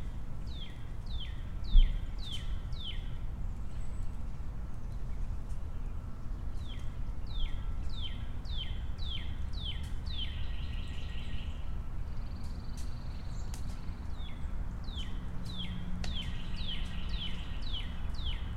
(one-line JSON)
{"title": "Pendergrast Park, Chrysler Dr NE, Atlanta, GA, USA - Small Wooded Trail", "date": "2021-01-23 16:11:00", "description": "A tiny neighborhood park with a dog trail. This recording was taken in the woods near the end of the trail. Lots of birds are heard in this recording. Airplanes and traffic in the background are also present. Plant matter can be heard dropping from the trees as the birds fly by.\n[Tascam DR-100mkiii & Primo EM-272 omni mics w/ improvised jecklin disk]", "latitude": "33.84", "longitude": "-84.30", "altitude": "304", "timezone": "America/New_York"}